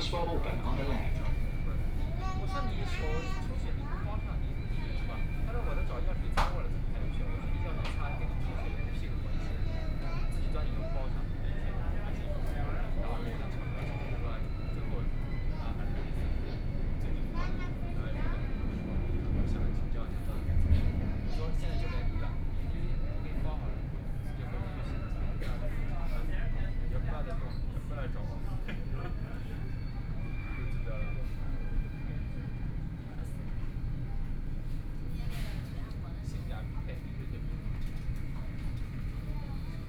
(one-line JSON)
{"title": "Yangpu District, Shanghai - Line 10 (Shanghai Metro)", "date": "2013-11-23 19:10:00", "description": "from Youdian Xincun station to Wujiaochangstation, Binaural recording, Zoom H6+ Soundman OKM II", "latitude": "31.29", "longitude": "121.51", "altitude": "8", "timezone": "Asia/Shanghai"}